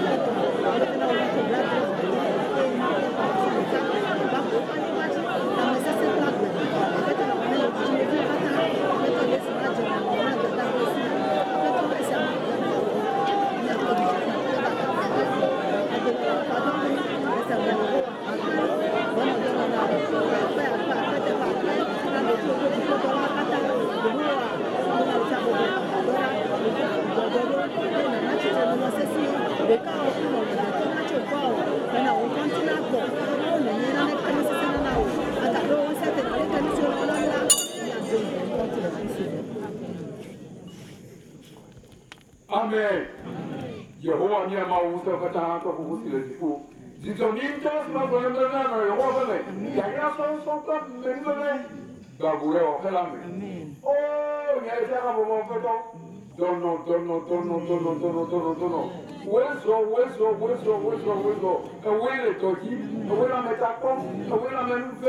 Togbe Tawiah St, Ho, Ghana - church of ARS service: On your knees!
church of ARS service: "On your knees!"
This is the point where everybody gets on his knees to pray to the almighty.
The church of ARS has a nice website. NB: i am not a believer, so i don't chase souls.